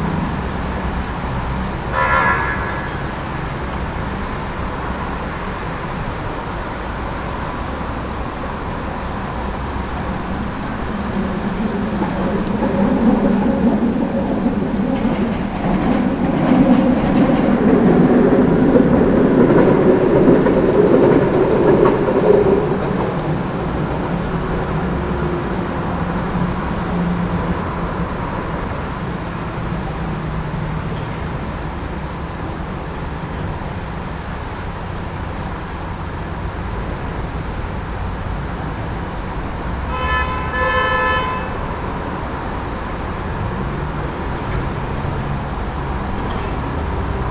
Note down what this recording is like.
Soundscape next to Bratislava´s Lafranconi bridge